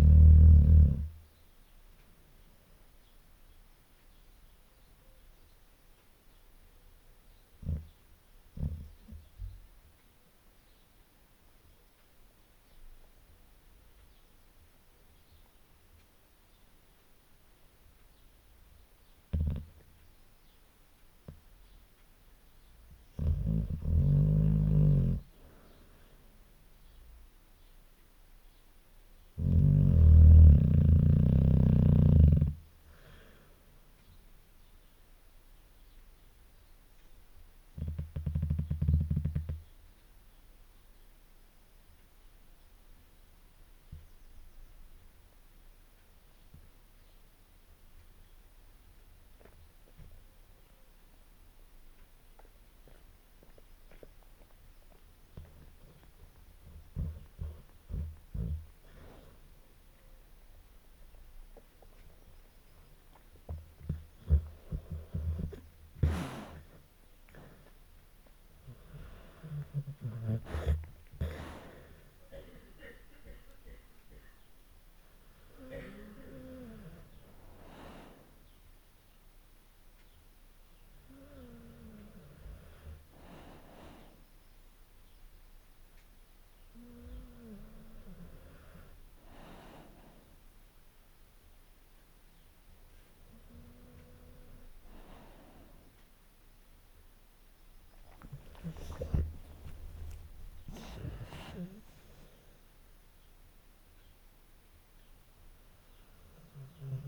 Podge ... the bull mastiff ... sleeping ... snoring ... again ... integral LS 14 mics ... she was seriously distressed ... we thought she might not return from the vets ... she's back with her family and none the worse ... rumble on old girl ... sadly Podge passed away ... 2019/12/28 ... an old and gentle lady ...
Helperthorpe, Malton, UK, 1 July 2019